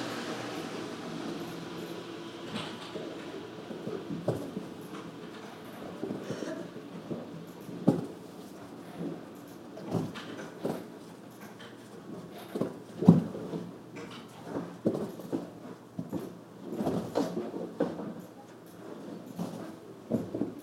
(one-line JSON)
{"title": "winter works", "date": "2011-01-19 13:55:00", "description": "Soundscape of an office windowsill. Shovellers of snow work on the roof to get it down before it falls down on someone. A man down on the street whistles when a pedestrian is passing by and the shovellers get a break. The work in the office goes on simultaneously.", "latitude": "58.38", "longitude": "26.71", "altitude": "71", "timezone": "Europe/Tallinn"}